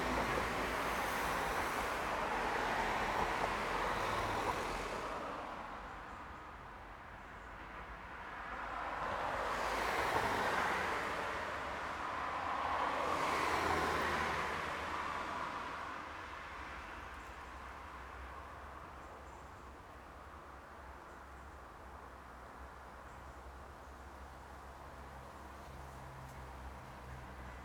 By main gates at Purcell School
16 August, 10:07